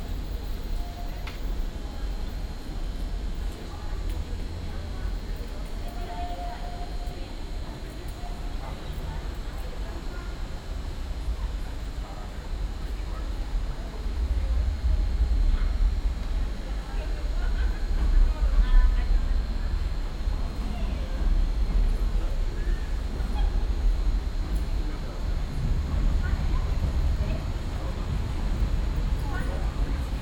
cologne, ebertplatz, ubahnstsation, fahrt zum hbf - koeln, nord, ebertplatz, ubahnstation, abends
soundmap: köln/ nrw
gang von eingang platz über rolltreppe zu bahngleis der u-bahnstation - bis einfahrt bahn, abends
project: social ambiences/ listen to the people - in & outdoor nearfield recordings